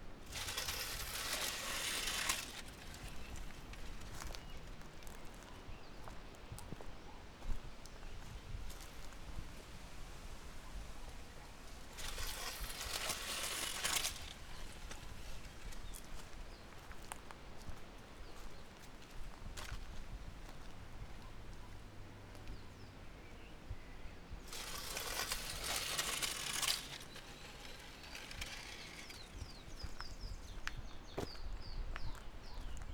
levada east from Camacha - wilted branch
a wilted branch floating down the levada dragging garbage. as it passed under a concrete footbridge every few seconds it made a scratching sound.